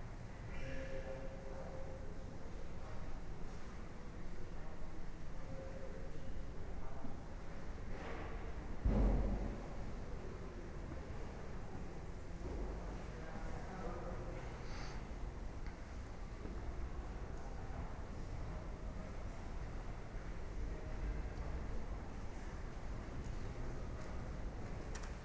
into the Sè, a musician plays the organ. A guardian is found what Im doing with an unidentified device.
Braga, Portugal, July 2010